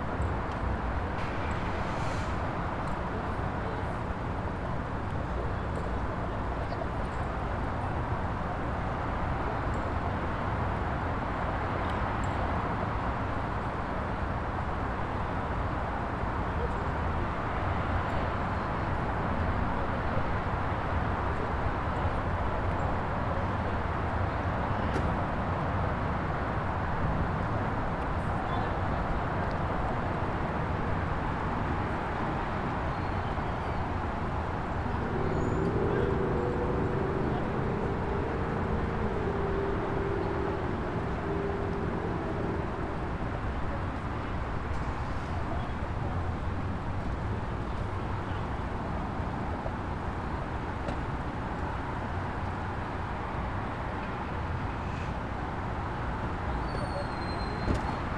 Mid-Town Belvedere, Baltimore, MD, USA - Penn Station Male/Female statue at night
In front of the Penn Station, at the Male/Female statue.
8pm on Sunday, not many people, not a busy timing, very peaceful.
Using a TASCAM DR-40.